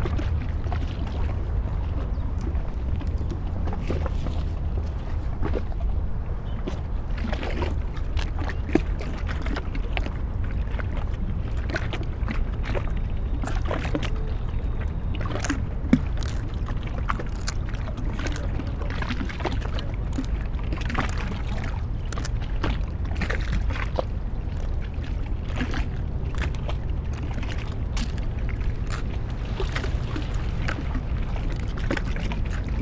lapping waves, clogs, gulls, crow, S-bahn, walking ...
Sonopoetic paths Berlin